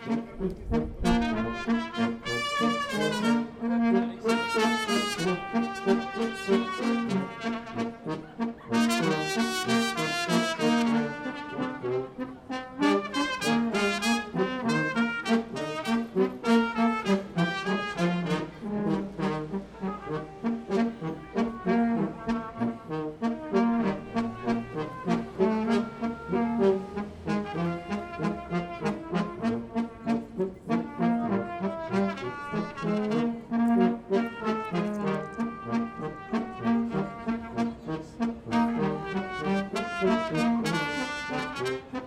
Václavské náměstí Praha, Česká republika - Rumanian Gypsy street musicians
Three musicians from South of Romania playing brass.